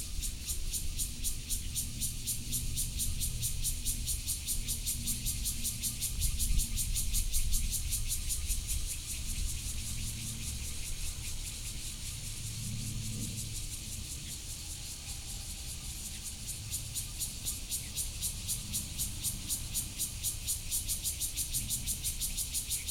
Fugang, Yangmei City, Taoyuan County - Cicadas
In a disused factory, Cicadas., Train traveling through, Distant thunder hit, Sony PCM D50 + Soundman OKM II
Taoyuan County, Taiwan